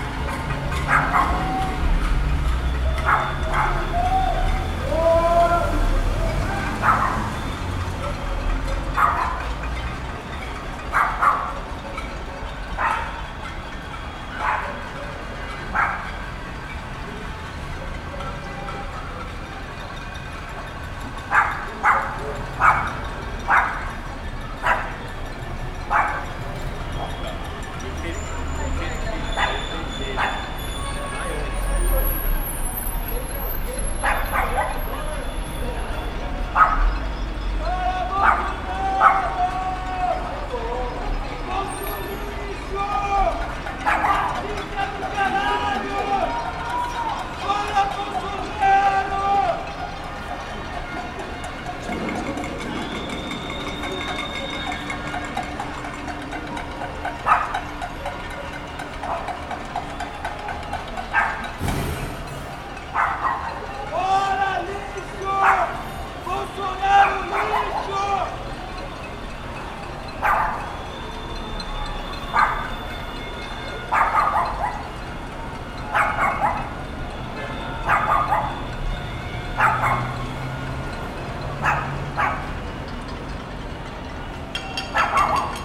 R. Paulo Orozimbo - Cambuci, São Paulo - SP, 01535-000, Brazil - Panelaço (Pot-banging protest) - Fora Bolsonaro! - 20h
Panelaço contra o presidente Jair Bolsonaro. Gravado com Zoom H4N - microfones internos - 90º XY.
Pot-banging protests against president Jair Bolsonaro. Recorded with Zoom H4N - built-in mics - 90º XY.
Região Sudeste, Brasil, 18 March, 20:00